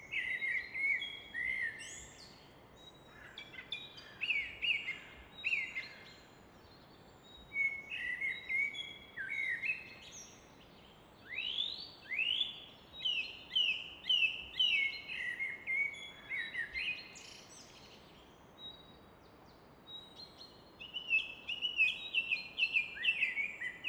{"title": "Fläsch, Schweiz - Abendstimmung Nachtigall", "date": "2004-06-22 19:44:00", "description": "Im Hintergrund wird das Rauschen des Rheins von einer Felswand reflektiert.", "latitude": "47.04", "longitude": "9.49", "altitude": "497", "timezone": "Europe/Zurich"}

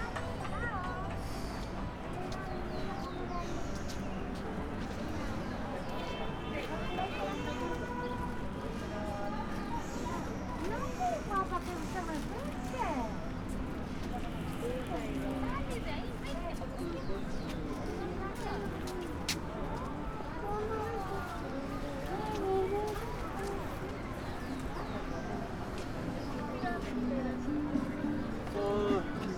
{"title": "Plaza Salvador Allende, Valparaíso, Chile - market soundwalk", "date": "2015-12-03 15:50:00", "description": "market at Plaza Salvador Allende, soundwalk at afternoon\n(Sony PCM D50)", "latitude": "-33.05", "longitude": "-71.61", "altitude": "19", "timezone": "America/Santiago"}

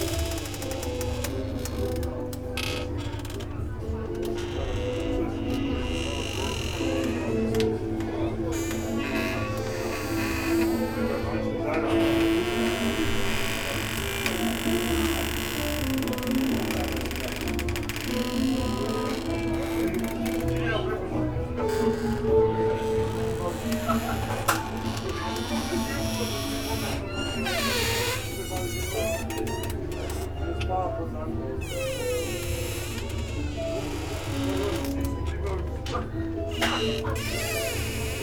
{"title": "sea room, Novigrad, Croatia - hot nights", "date": "2015-07-18 23:48:00", "description": "terrace band plays bessame song, restaurant aeration device in the anteroom runs in full power, built in closet wants to sing lullaby ...", "latitude": "45.32", "longitude": "13.56", "timezone": "Europe/Zagreb"}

